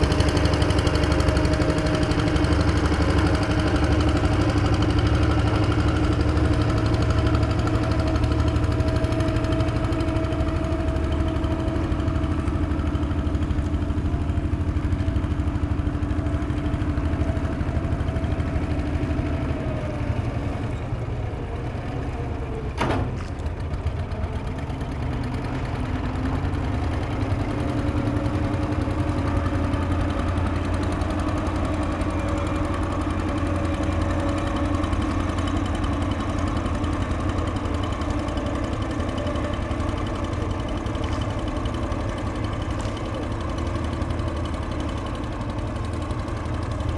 Baoyang Branch Rd, Baoshan Qu, Shanghai Shi, China - Noisy barge in cruise terminal
A simple barge steered by one man and driven by an old retrofitted motor, used to transport passengers in the terminal. We can hear the change of gears. Sound of nearby clapping water
Une barge rudimentaire, pilotée par un homme et équipé d’un ancien moteur, bruyant. La barge est utilisée pour transporter des passagers dans le terminal. On peut entendre les changements de vitesse du moteur. Bruit d’eau sur la berge.